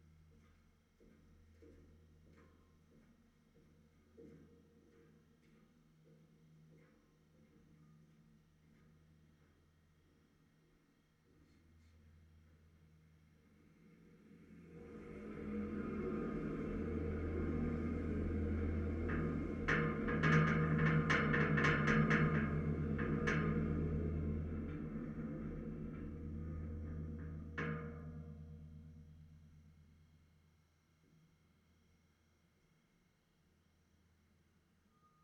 Auf dem Kiewitt, Potsdam, Deutschland - Auf dem Kiewitt, Potsdam - Contact mic at the railing of the bridge
A contact mic is attached to the handrail of the bridge, recording steps of people on the bridge as well as passing trains. Recorded during the workshop ›Listening to the Environment‹ with Peter Cusack at ZeM – Brandenburgisches Zentrum für Medienwissenschaften, Potsdam.
[Piezo mic made by Simon Bauer/Sony PCM-D100]
Potsdam, Germany